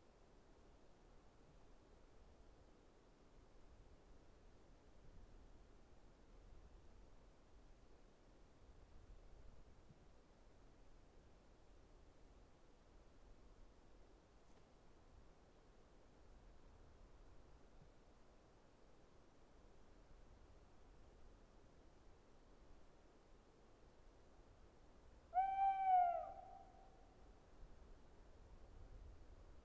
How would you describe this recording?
Lonely Tawny owl singing in the night. The weather is cloudy, temp 8-10 C°, Gentle breeze on the treetop and on the montain slope. About 50m from me. A place with a narow valley with less noise pollution (beside airplanes!) Recording Gear : 2 AT4021 in ORTF, Sound Device Mix pre 6. + 3db, 80hz lowcut filter